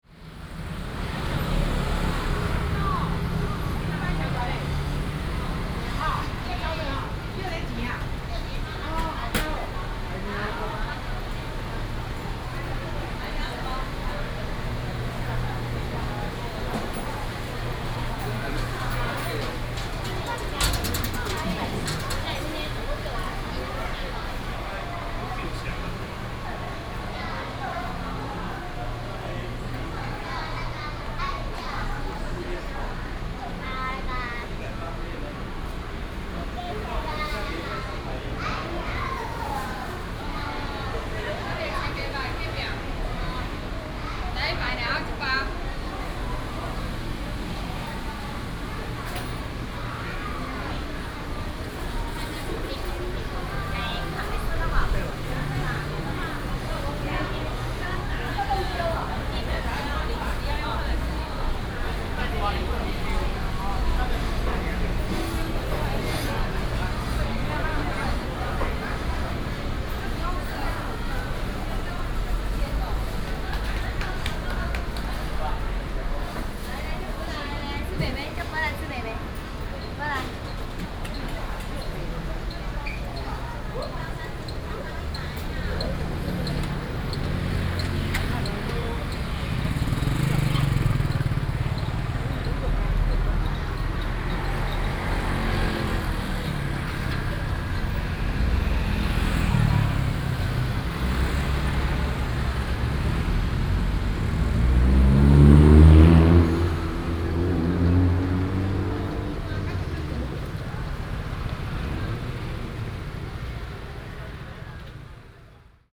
豐東黃昏市場, Fengyuan Dist., Taichung City - dusk market
Walking in the dusk market, Traffic sound, Binaural recordings, Sony PCM D100+ Soundman OKM II